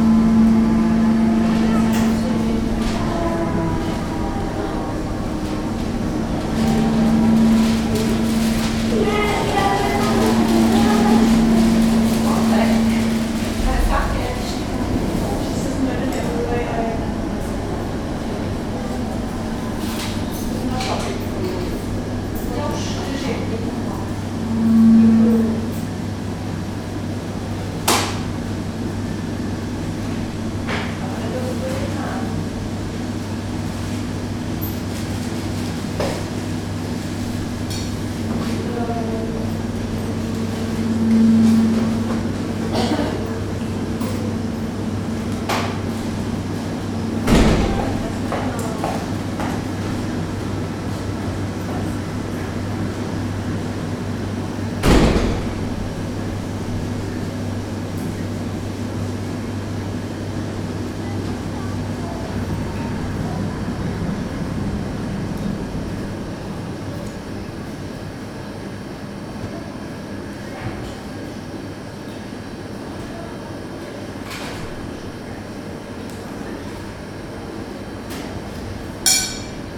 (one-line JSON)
{"title": "sirény Praha, Česká republika - Sirény ve Veletržním paláci", "date": "2014-11-13 12:01:00", "description": "Regularly 1st wednesday every Month you can hear the sirens air raid test at noon thi stime recorded on the top floor of the Trade Fair Palace National Gallery in Prague", "latitude": "50.10", "longitude": "14.43", "altitude": "213", "timezone": "Europe/Prague"}